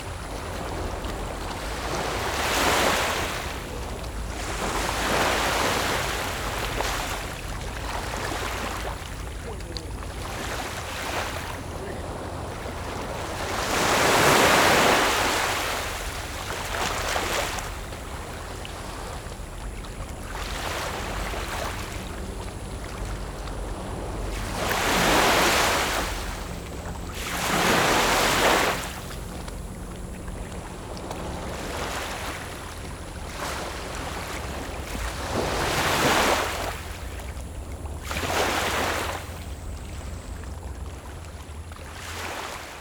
Yu’ao, Wanli Dist., New Taipei City - The sound of the waves